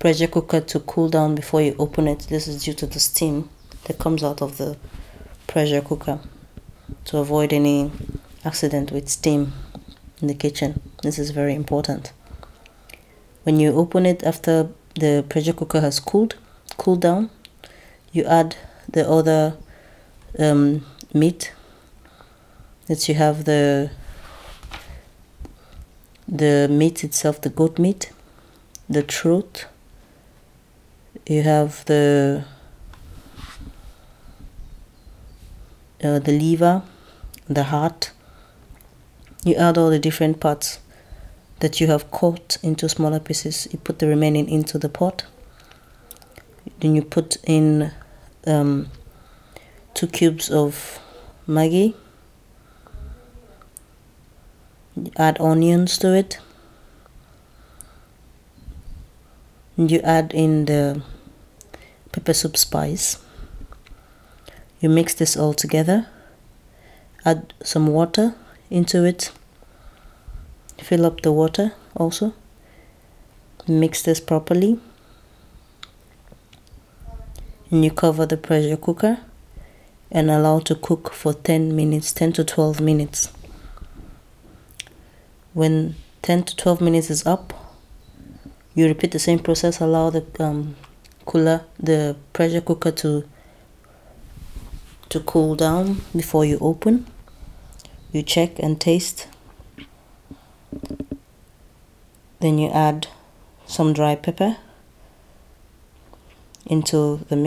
Kinderbetreuung of AfricanTide - Chinelos goat-meat pepper soup...
...you wanted to know the secrets of the entire recipe ...?